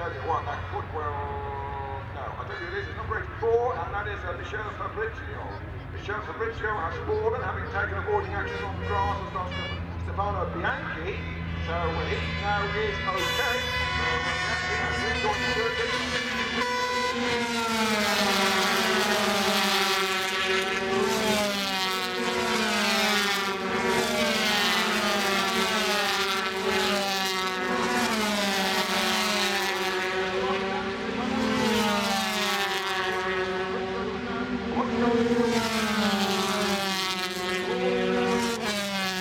Castle Donington, UK - British Motorcycle Grand Prix 2002 ... 125 ...
125cc motorcycle race ... part one ... Starkeys ... Donington Park ... race and associated noise ... Sony ECM 959 one point stereo mic to Sony Minidisk ...
Derby, UK, 14 July 2002, 11:00